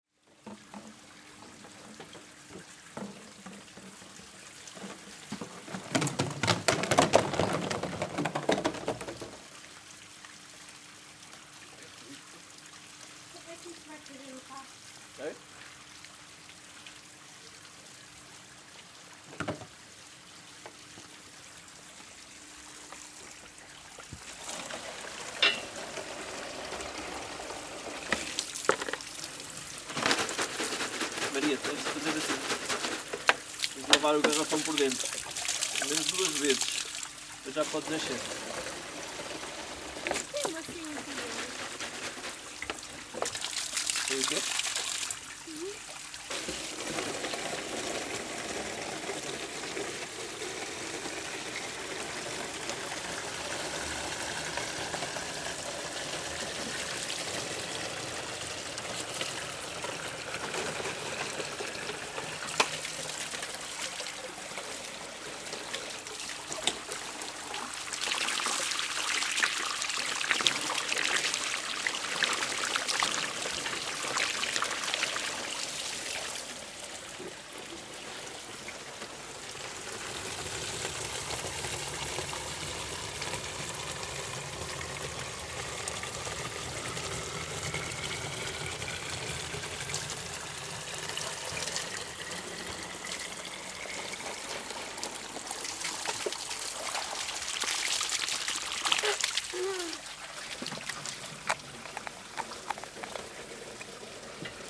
A family collects natural water from a spring of superficial origin that runs through the Fountain of St. John in Luso, Portugal.
They fill several plastic bottles with Luso's water to consume at home.